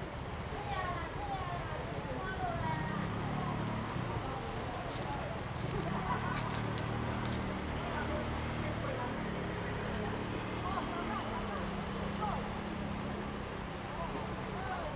本福村, Hsiao Liouciou Island - Waves and tides
Waves and tides, Birds singing
Zoom H2n MS+XY